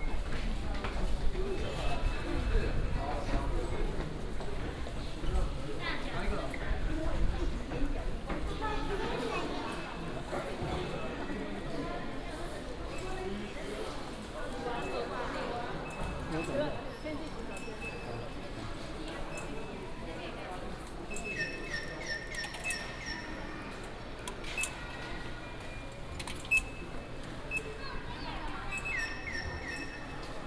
walking in the Station, From the platform to the station exit, Footsteps
Shalu District, Taichung City, Taiwan, 27 February 2017